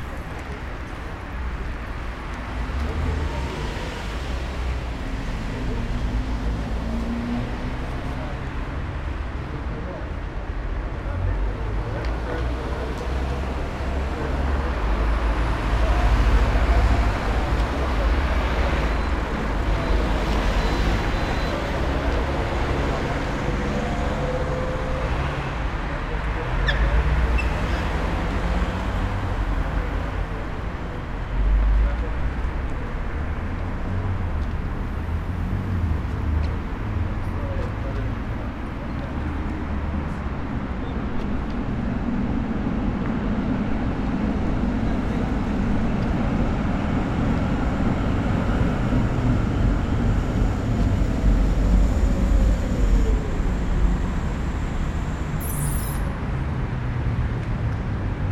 Waiting for a police siren, but there were none.